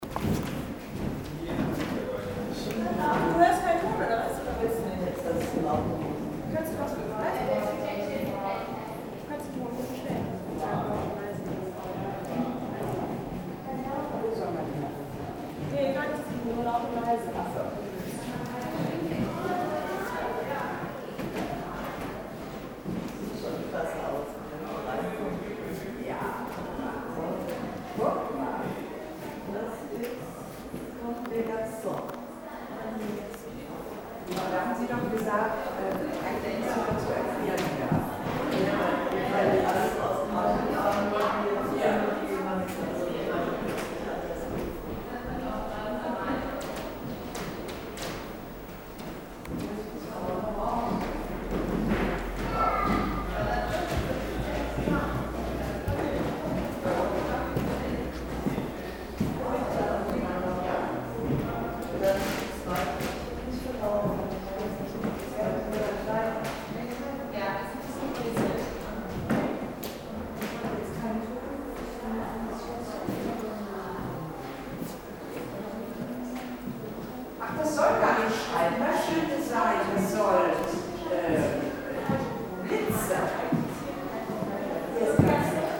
{"title": "Düsseldorf, Ehrenhof, nrw forum, exhibition preview - düsseldorf, ehrenhof, nrw forum, exhibition preview", "date": "2009-08-02 15:46:00", "description": "preview walk in the exhibition catwalk\nsoundmap nrw: social ambiences/ listen to the people in & outdoor topographic field recordings", "latitude": "51.23", "longitude": "6.77", "altitude": "41", "timezone": "Europe/Berlin"}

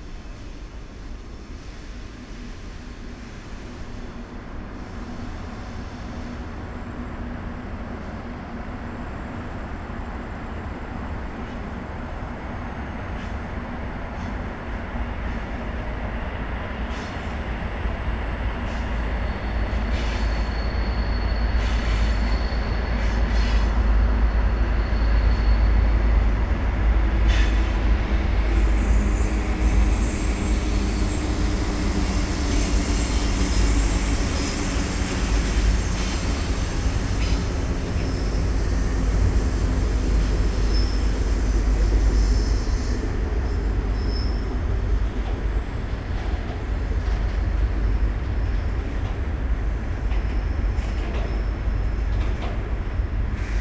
{"title": "Mediapark, Köln, Deutschland - trains and echos", "date": "2014-07-15 21:50:00", "description": "sitting on a small hill in the back of the Mediapark buildings, listening to trains and their echos reflecting from the walls. besieds that, warm summer evening ambience.\n(Sony PCM D50, DPA4060)", "latitude": "50.95", "longitude": "6.94", "altitude": "54", "timezone": "Europe/Berlin"}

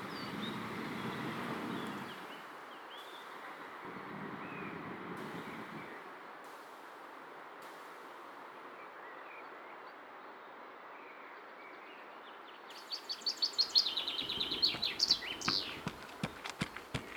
{"title": "Schonnebeck, Essen, Deutschland - essen, park at Hallo stadium", "date": "2014-04-12 16:40:00", "description": "Im Park am Hallo Stadion an einem milden, windigem Frühjahrsnachmittag. Der Klang des Parks mit Joggern auf dem Kiesweg.\nIn a park near the Hallo stadium at a mild windy spring afternoon. The overall park ambience and some jogger on the path.\nProjekt - Stadtklang//: Hörorte - topographic field recordings and social ambiences", "latitude": "51.48", "longitude": "7.05", "altitude": "62", "timezone": "Europe/Berlin"}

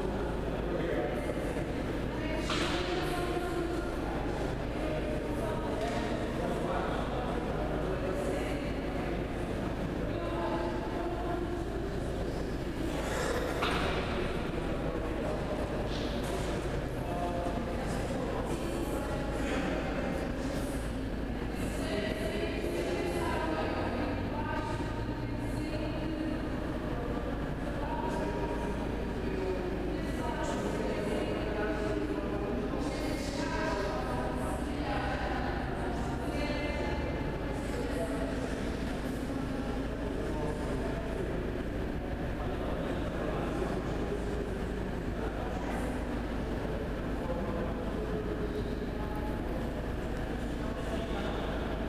{"title": "ESAD, Caldas da Rainha, Portugal - 1º place", "date": "2014-02-28 14:00:00", "description": "inside the building on the first floor with balcony\nstereophonic pickup", "latitude": "39.40", "longitude": "-9.13", "timezone": "Europe/Lisbon"}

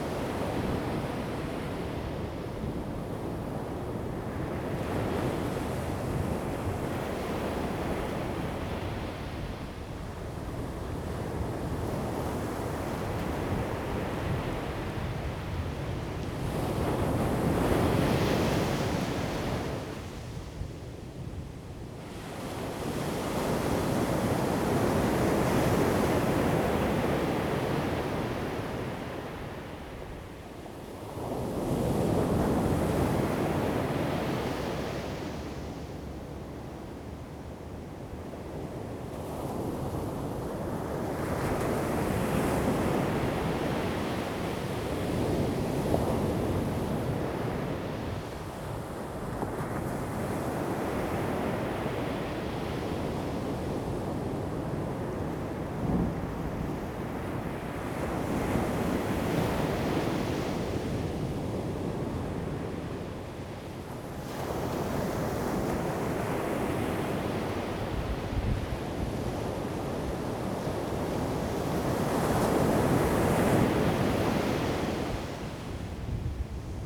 {"title": "烏石鼻沙灘, 北烏石鼻, Changbin Township - the waves and Thunder", "date": "2014-09-08 14:21:00", "description": "Sound of the waves, Traffic Sound, Thunder\nZoom H2n MS+XY", "latitude": "23.23", "longitude": "121.41", "altitude": "5", "timezone": "Asia/Taipei"}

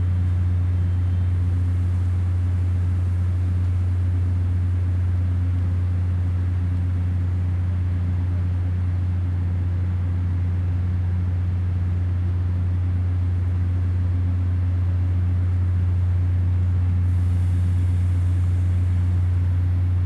{"title": "福文村, Chihshang Township - Near the station", "date": "2014-09-07 14:00:00", "description": "Near the station, Train arrival and departure, Very hot weather\nZoom H2n MS+ XY", "latitude": "23.13", "longitude": "121.22", "altitude": "269", "timezone": "Asia/Taipei"}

